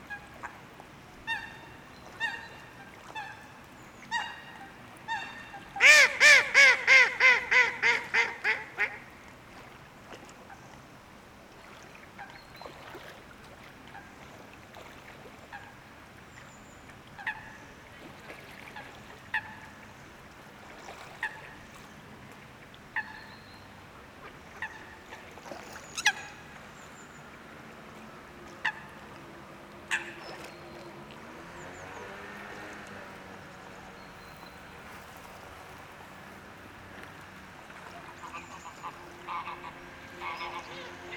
Seneffe, Belgium - Very quiet ambiance at the canal
Near an old and disused canal, birds are searching food in the water : Common Moorhen, Eurasian Coot, Mallard, unhappy geese. Ambiance is very very quiet during the winter beginning.